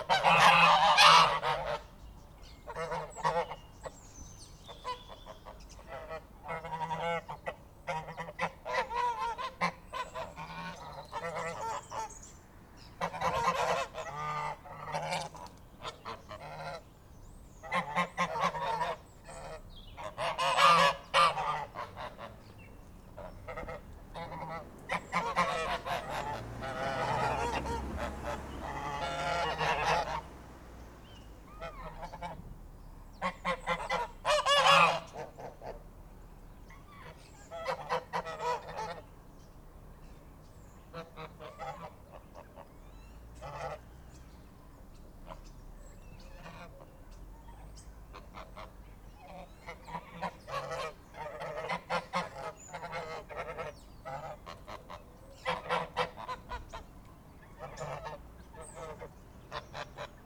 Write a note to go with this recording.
A gaggle of domestic geese in a backgarden ... how many days before they are gone ..? LS 11 integral mics ...